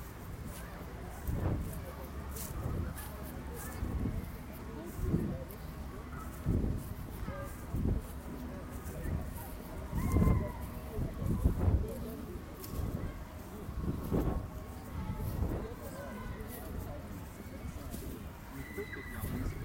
walking across the area. water, children etc.
recorded june 23rd, 2008.
project: "hasenbrot - a private sound diary"
Lippstadt, Germany